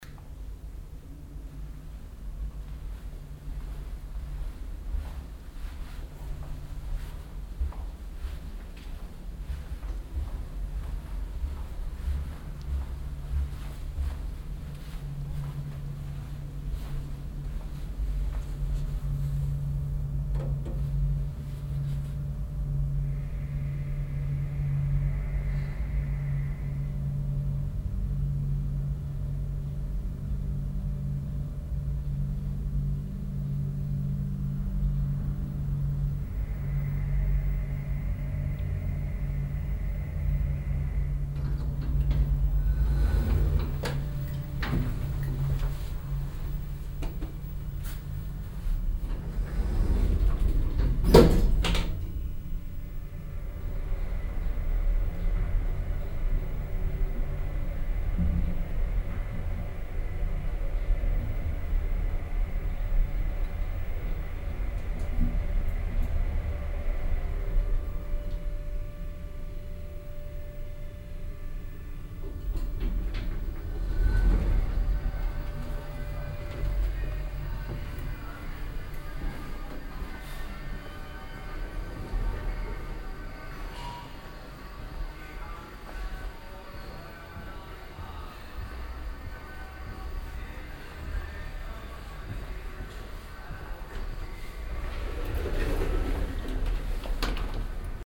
wolfsburg, willy brandt platz, hotel, flur, aufzug, foyer
schritte auf dem hotelflurteppich, holen und fahrt mit dem aufzug, gang aus dem foyer
soundmap:
social ambiences, topographic field recordings